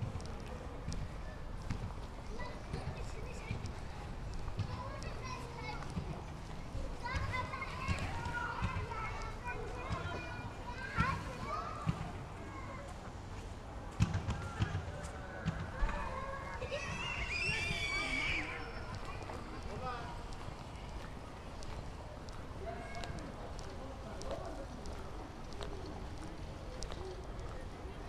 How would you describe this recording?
Berlin Buch, Sunday evening, walking from Wolfgang-Heinz-Str. to river Panke, along a residential project for refugees, a skate park, manholes with water, a playground, and the almost silent river Panke. (Sony PCM D50, Primo EM272)